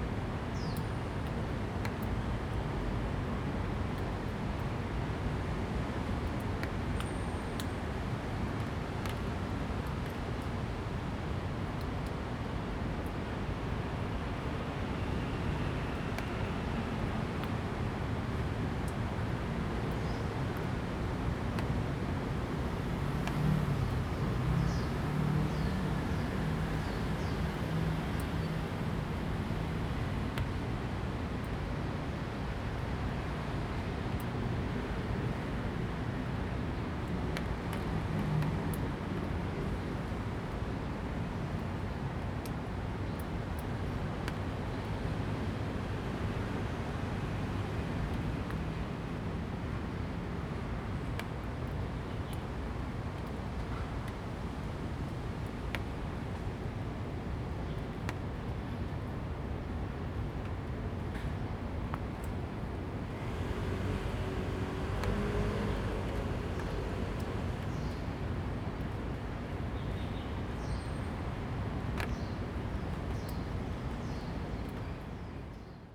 Hean Park, Da’an Dist., Taipei City - Raindrop
In the woods, Raindrop, After the thunderstorm, Bird calls, Traffic Sound
Zoom H2n MS+XY
Taipei City, Taiwan, 30 July 2015